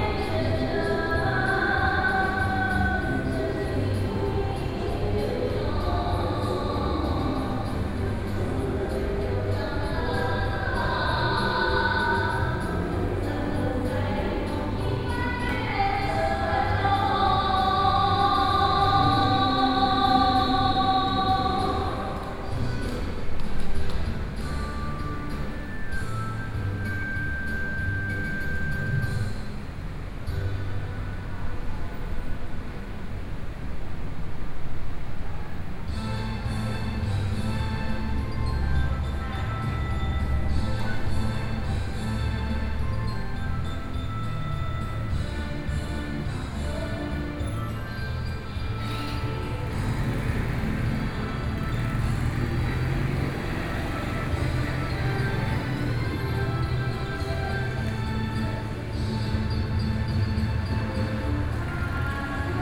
Chenggong viaduct, Taoyuan County - Woman is singing
In the bridge below the community center, Sony PCM D50 + Soundman OKM II